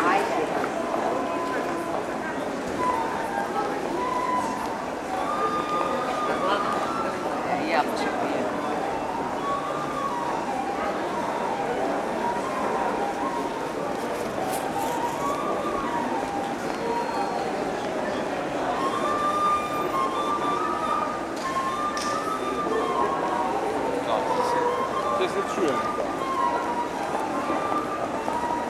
Skopje, Gradski Trgovski Centar - Flute Busker
Man playing a traditional flute in Gradski Trgovski Centar, Skopje.
Binaural recording.